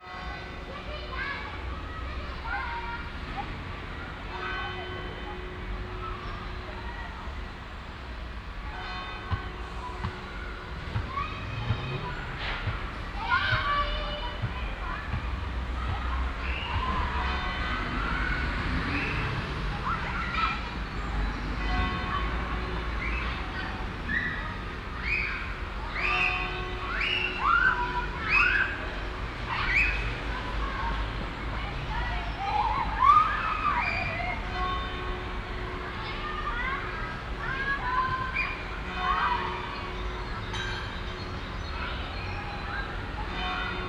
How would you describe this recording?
An der St, Nikolaus Kirche. Die 12 Uhr Stundenglocke und anschließend das lange 12 Uhr Geläut gepaart mit den Glocken der unweiten Thomaskirche. Gegen Ende Anfahrt und Parken eines getunten Pkw's. At the St. Nikolaus Church. The sound of the 12 0 clock hour bell plus the bells of the nearby Thomas church. At the end he sound of a tuned car driving close and parking. Projekt - Stadtklang//: Hörorte - topographic field recordings and social ambiences